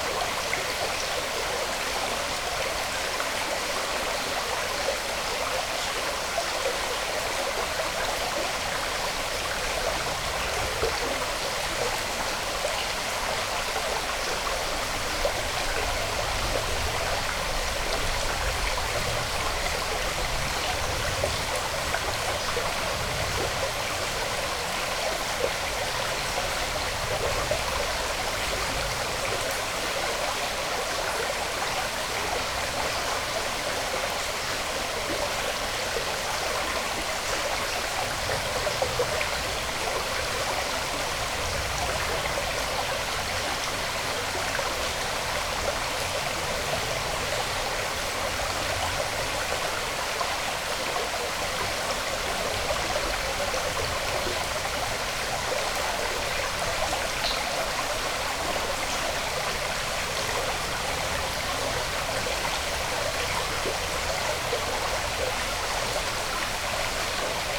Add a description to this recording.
Near Gaberje - Under stone birdge on stream Branica. Lom Uši Pro, Mix Pre3 II